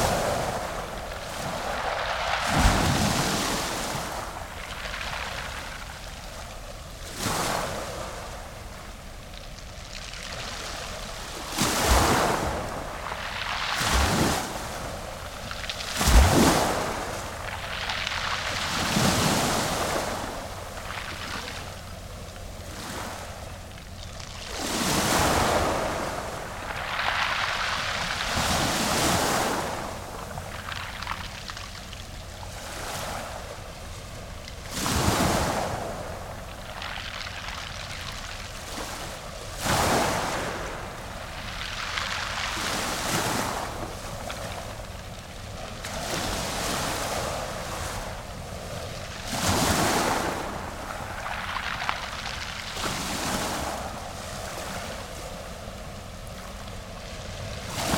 Clawing wave action 덕산항 (Deoksan)